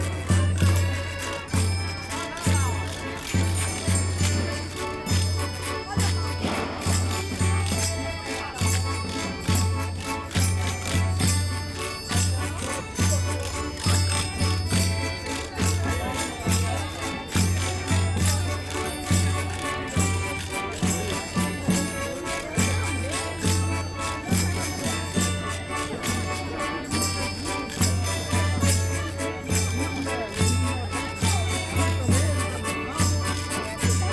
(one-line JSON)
{"title": "Porto, Praça da Ribeira - folk musicians", "date": "2010-10-16 13:50:00", "description": "folk singers, agricultural initiative gives bags full of apples to people", "latitude": "41.14", "longitude": "-8.61", "altitude": "16", "timezone": "Europe/Lisbon"}